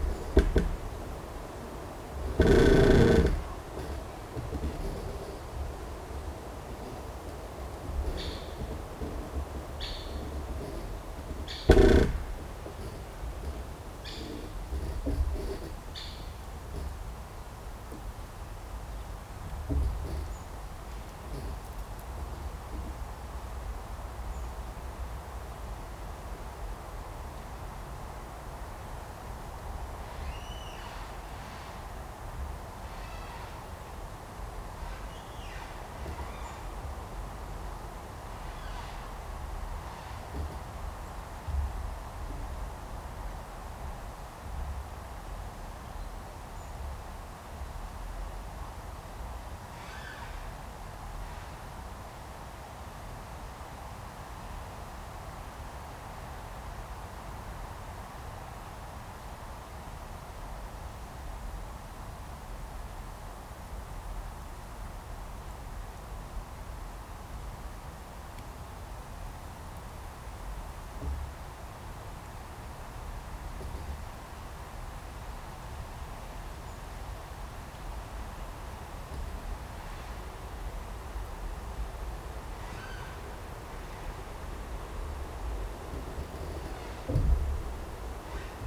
Creaking tree 1 internal and external, Vogelsang, Zehdenick, Germany - Creaking tree 1 internal and external sounds mixed
There is also a lovely deep bass from wind blowing through the upper branches and leaves even when it is not creaking.
The contact mics are simple self made piezos, but using TritonAudio BigAmp Piezo pre-amplifiers, which are very effective. They reveal bass frequencies that previously I had no idea were there.
Brandenburg, Deutschland